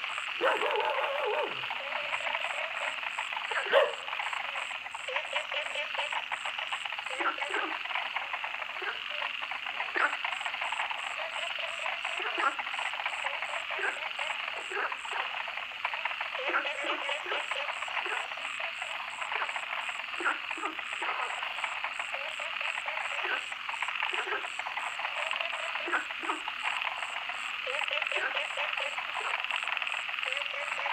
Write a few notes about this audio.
Ecological pool, Various types of frogs, Frogs chirping, Zoom H2n MS+XY